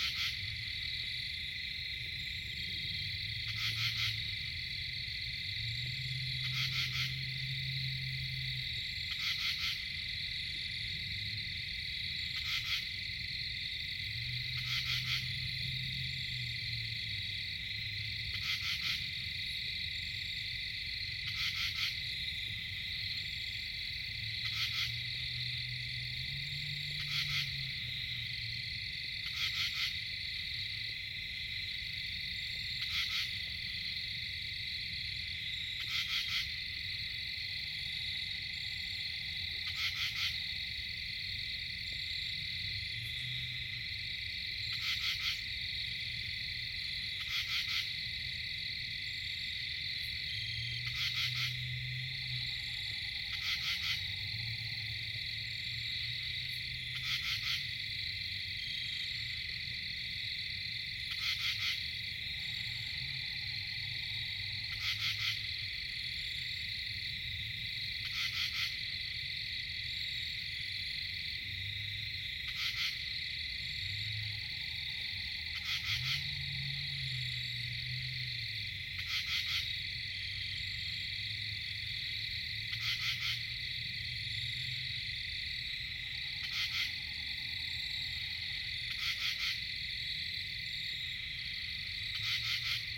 Quaker Bridge Road, NJ, USA - midnight in the pines
Tucked off of Quaker Bridge Road in the pine barrens of Wharton State Forest. Mostly hypnotic insect chatter and long-ranged traffic noise. A screech owl haunts late in the recording. One of many solo nights spent deep in the forest, simply listening. Fostex FR2-LE; AT3032)
11 July, 12:00